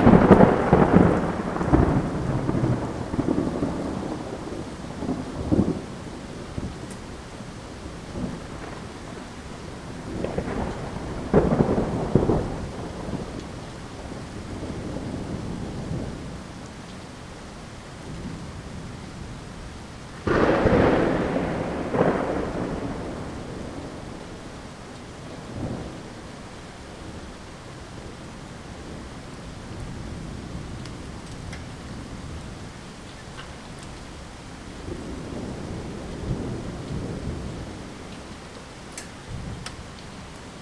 Rue de la Capitale, Marseille, France - Marseille - Quartier du Roucas Blanc - 1 heure d'orage en résumé en quelques minutes.
Marseille - Quartier du Roucas Blanc
Cette nuit, la Bonne Mère s'illumine au rythme des éclairs.
1 heure d'orage en résumé en quelques minutes.
Zoom F3 + Neuman KM184